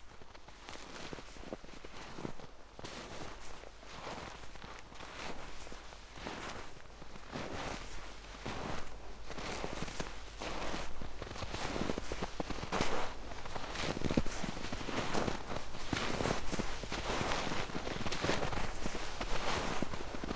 Grabenstetten, Schwarze Lauter, Deutschland - Steps on snow
Deutschland, European Union